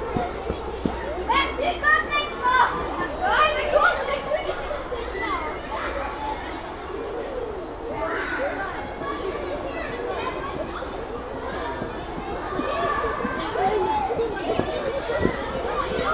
Court-St.-Étienne, Belgique - Steiner school
Sounds of the Steiner school. Children are playing, quite far, in the woods.
Court-St.-Étienne, Belgium, 18 September 2015, 13:30